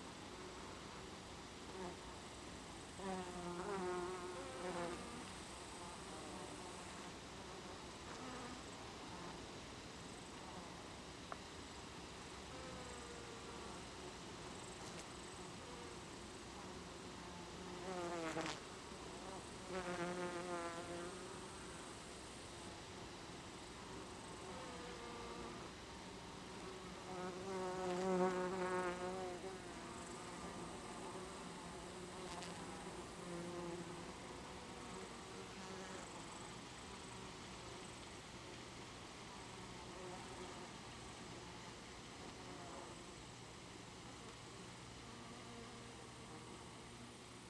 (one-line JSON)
{"title": "Utena, Lithuania, bees in thymes", "date": "2019-07-30 14:50:00", "description": "polination: bees in thymes", "latitude": "55.49", "longitude": "25.72", "altitude": "178", "timezone": "Europe/Vilnius"}